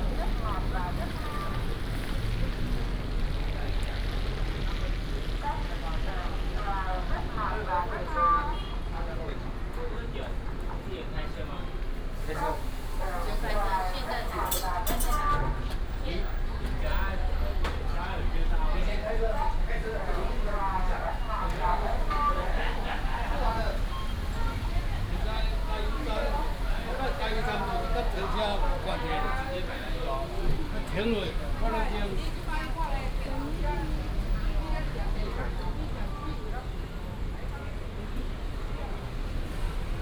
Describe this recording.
Walking in the street, Southeast Asia Shop Street, Binaural recordings, Sony PCM D100+ Soundman OKM II